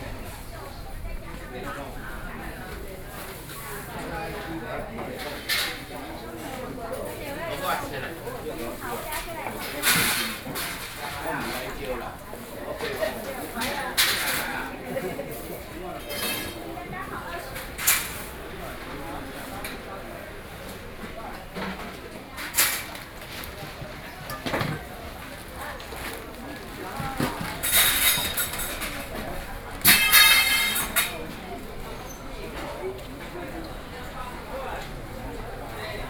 Jingmei St., Wenshan Dist. 台北市 - Traditional markets